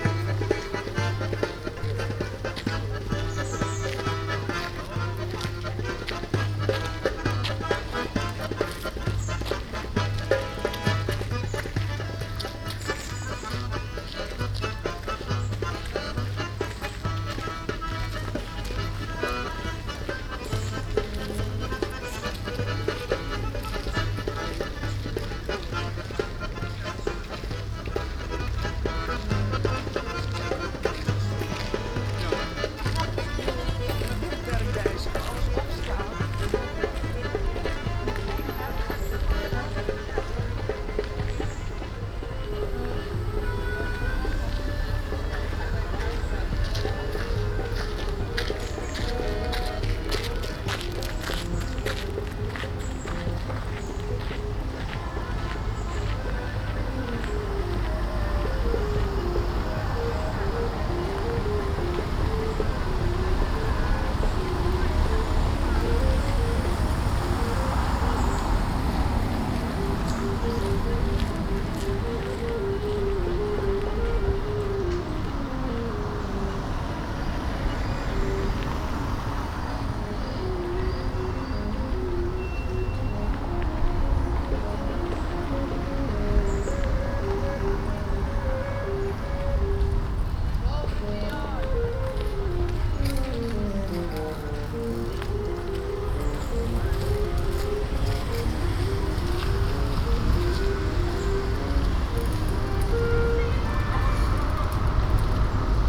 Voorhout, Centrum, Nederland - The Hague Sculpture 2012
The Hague Sculpture 2012 Lange Voorhout. Part of the 'Rainbow Nation' exhibition.
Some technical glitch forced me to do an unfortunate edit somewhere in the middle. But I like the atmosphere so much that I submit it anyway.
The Hague Center, The Netherlands, 22 July 2012